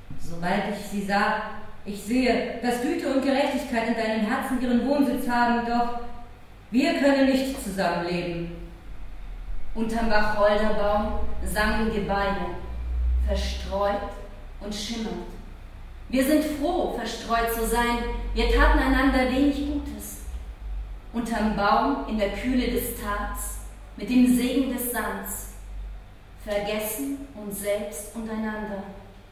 Salon Petra - Salon Petra: T.S.Eliot + Lautreamont
kathrin and monica from salon petra performing Lautreamont
Berlin, Germany